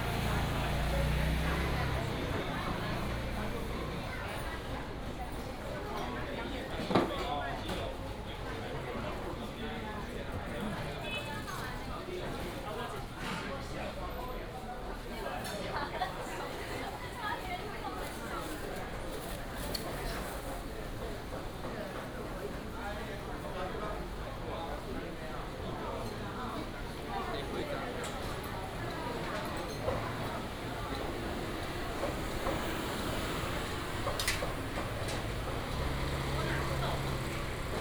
花壇公有市場, Huatan Township - in the public market
Walking in the public market
18 March 2017, ~11:00